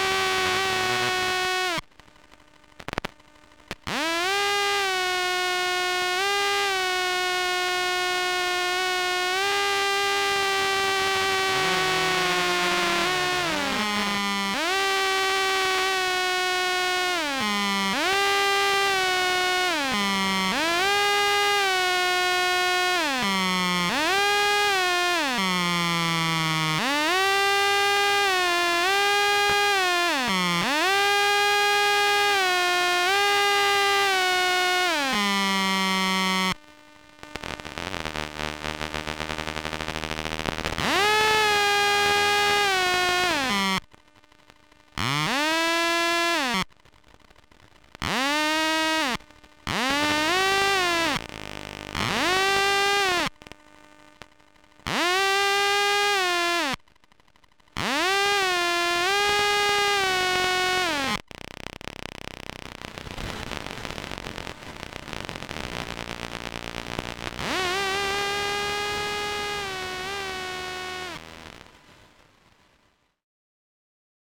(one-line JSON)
{"title": "Vilnius, Lithuania, electromagnetic listenings: trolleys", "date": "2020-12-03 15:45:00", "description": "standing at the street with Soma Ether electromagnetic listening device. Trolleys passing by...", "latitude": "54.67", "longitude": "25.28", "altitude": "140", "timezone": "Europe/Vilnius"}